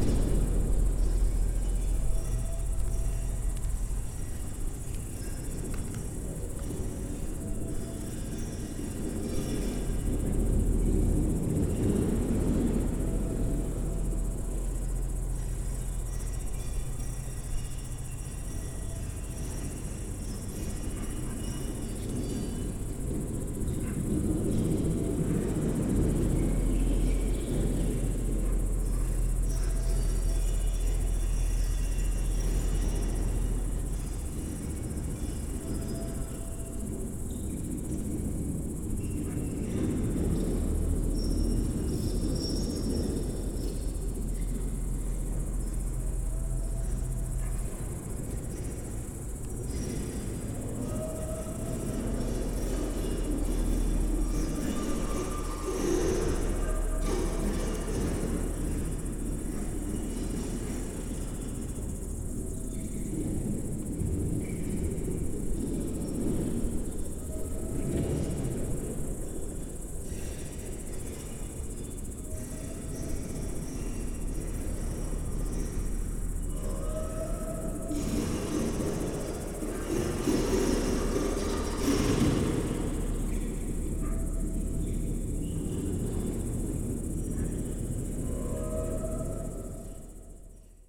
'ilmapall' is an odd over sized fiberglass dome that ended up on a farm in the Estonian countryside. This recording was made with the vocal group 'Vaikuse Koosolek' who improvise with the space one summer evening while taking a break from a recording session.
Vastseliina, Estonia, 12 August 2009